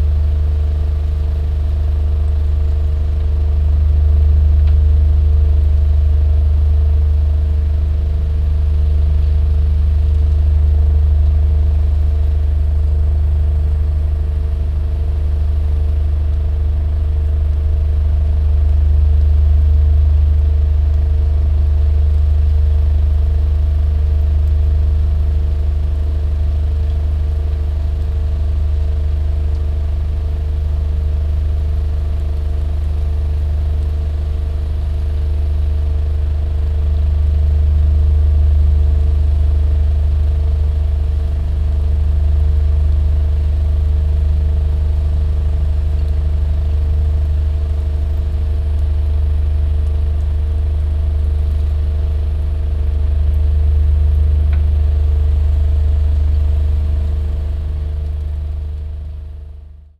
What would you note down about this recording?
chest punching hum of a industrial water pump